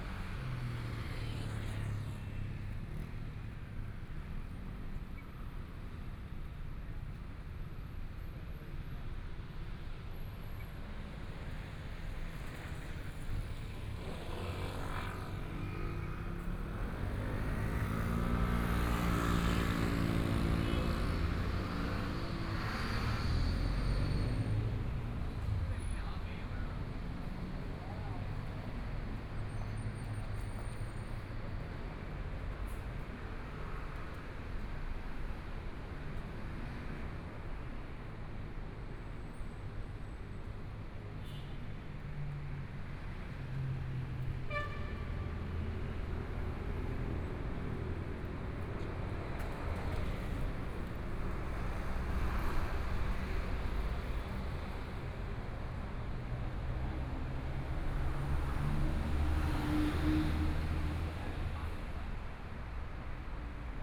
walking in the Songjiang Rd.., Traffic Sound, toward to Minzu E. Rd., Binaural recordings, Zoom H4n+ Soundman OKM II
Taipei City, Taiwan, 20 January 2014, ~15:00